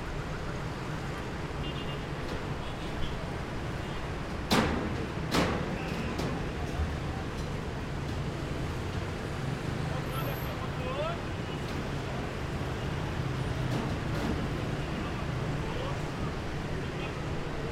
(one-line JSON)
{"title": "Tehran, Unnamed Road،استان تهران، تهران، منطقه ۶،، Iran - Going around 7 Tir square", "date": "2015-11-07 11:40:00", "latitude": "35.72", "longitude": "51.43", "altitude": "1232", "timezone": "Asia/Tehran"}